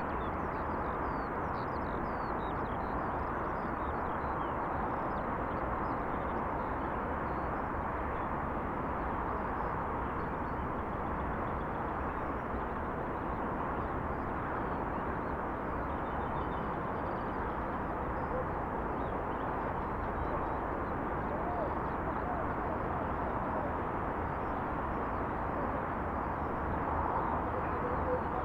berlin, tempelhofer feld: rollweg - the city, the country & me: taxiway
birds, sound of freeway a 100
8 May 2010, 16:47